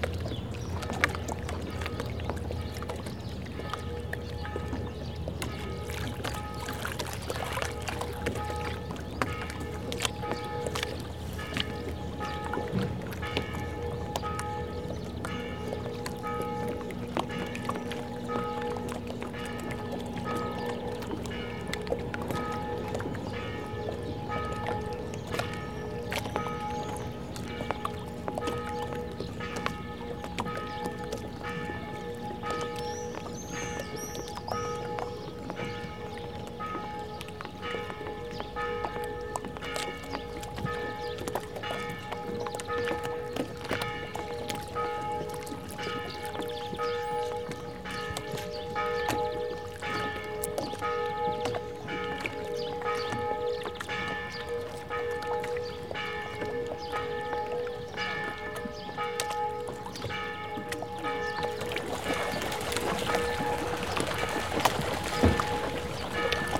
Lake of Piediluco, Umbria, Lapping and Bells
lapping, bells and fishing boat